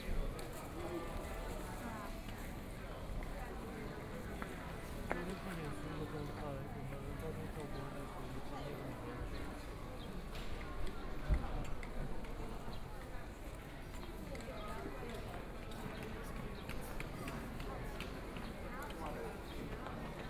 Travelers to and from the Station hall, Messages broadcast station, Binaural recordings, Zoom H4n+ Soundman OKM II